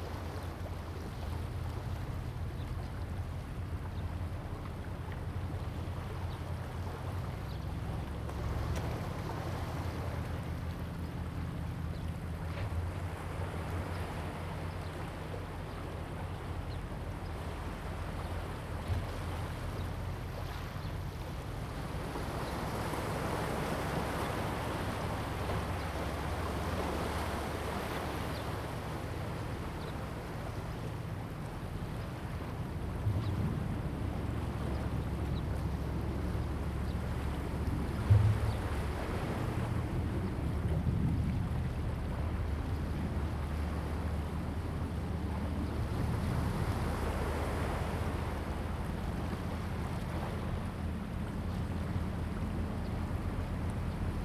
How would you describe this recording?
In the bank, Sound of the waves, Zoom H2n MS +XY